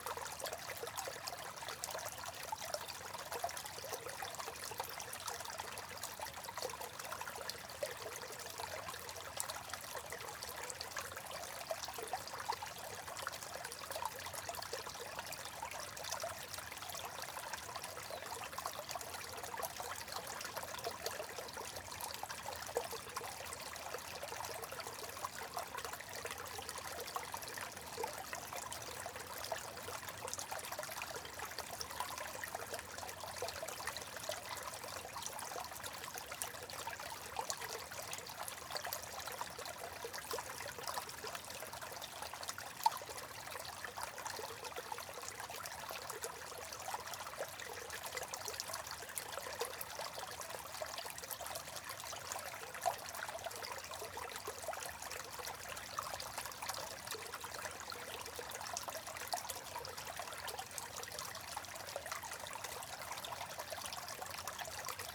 Went out for a walk with my recording gear to a woods not far from my home. Due to the amount of rain we have had recently there is quite a lot of water running off the fields and it has created a few extra streams in this woods, which are usually dry in the summer. I found a nice little spot to record the trickling of the water.
Weather - Cold, Dry, partly cloudy and a light breeze
Microphone - 2 x DPA4060
Recorder - Sound Devices Mixpre-D & Tascam DR100
Cornwall, UK